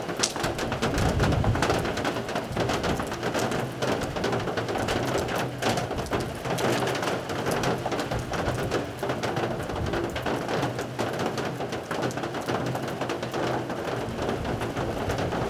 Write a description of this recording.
Sv. Urban church, rain and wind, rain drops on metal porch roof, (PCM D-50)